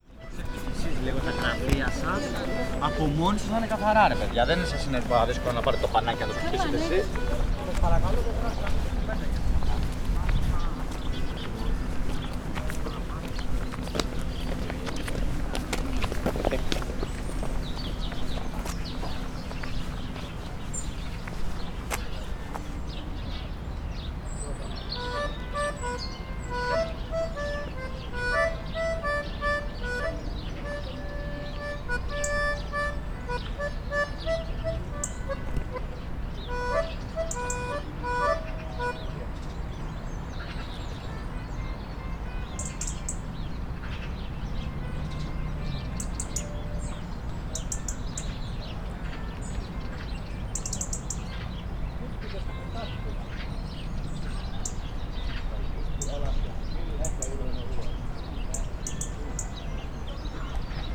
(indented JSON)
{
  "title": "Athens, Dionysiou Areopagitou street - child accordion",
  "date": "2015-11-06 12:10:00",
  "description": "a child timidly playing sparse melody on an accordion and begging for money. (sony d50)",
  "latitude": "37.97",
  "longitude": "23.72",
  "altitude": "97",
  "timezone": "Europe/Athens"
}